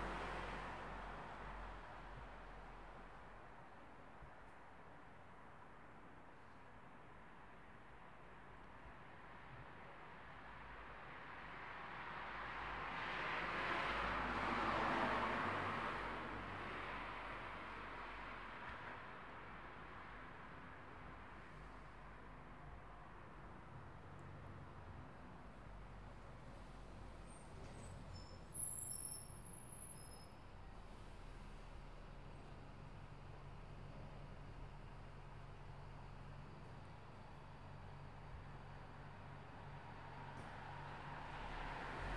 Swaythling Methodist Church, Southampton, UK - 022 At night